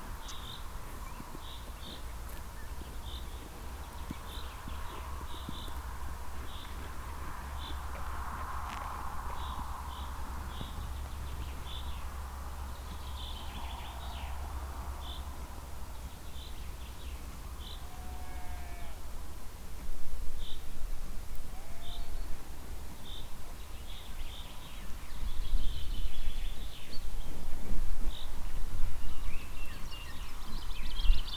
hoscheid, sheeps on a meadow
Two sheeps eating grass on a meadow. The birds in the distant trees, the drone of the traffic coming in and out with the mellow wind movements. Recorded in early spring in the early evening time.
Hoscheid, Schafe auf einer Wiese
Zwei Schafe essen Gras auf einer Wiese. Die Vögel in den fernen Bäumen, das Dröhnen des Verkehrs kommt und geht mit den sanften Windbewegungen. Aufgenommen im Frühjahr am frühen Abend.
Hoscheid, moutons dans une prairie
Deux moutons broutant de l’herbe sur une prairie. Les oiseaux dans les arbres dans le lointain, le bourdonnement du trafic entrant et sortant avec les doux mouvements du vent. Enregistré au début du printemps, en début de soirée.
Projekt - Klangraum Our - topographic field recordings, sound sculptures and social ambiences
2 June 2011, ~16:00